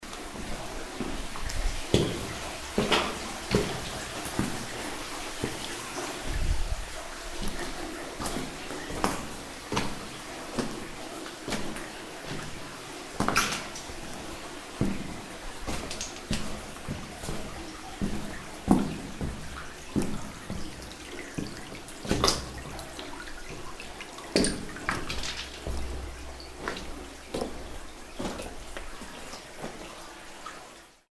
caprauna, fereira, water & steps in tunnel
soundmap international: social ambiences/ listen to the people in & outdoor topographic field recordings